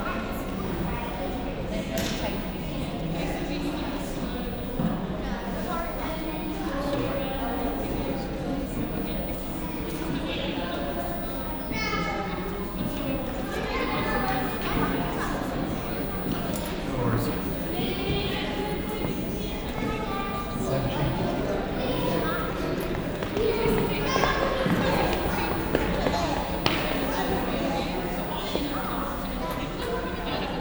Binaural interior, Malvern, UK
A trial of the now discontinued Sennheiser Ambeo Smart Headsets. I acquired these on Amazon for a very low price. They are not great. They have an intermittent crackle on the right channel and all the features except record are missing on my iphone 6s. For dynamic omnis the mics are not bad. Listen with headphones and see what you think.